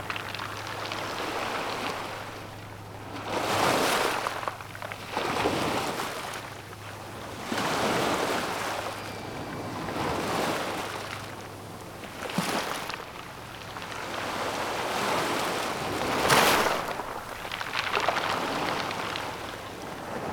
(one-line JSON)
{"title": "Corniglia, south beach side - waves and pebbles", "date": "2014-09-06 11:58:00", "description": "the beach in Corniglia is made of round stones in an average size of a tennis ball. all stones are smoothly shaped by the waves. grainy sound of pebbles rolling in the waves.", "latitude": "44.12", "longitude": "9.72", "altitude": "14", "timezone": "Europe/Rome"}